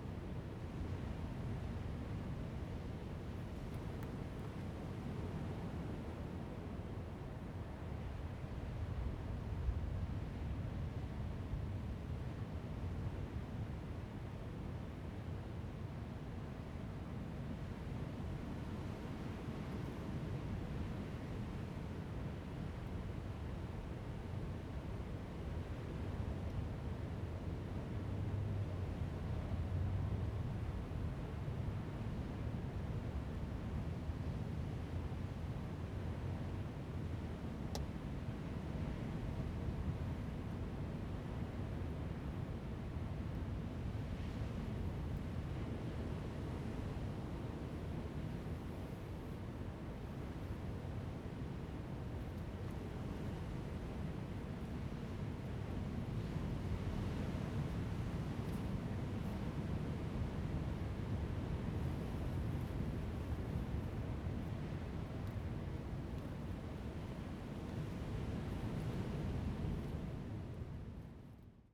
Hiding in the rock cave, sound of the waves
Zoom H2n MS +XY
Lanyu Township, Taitung County, Taiwan, 29 October 2014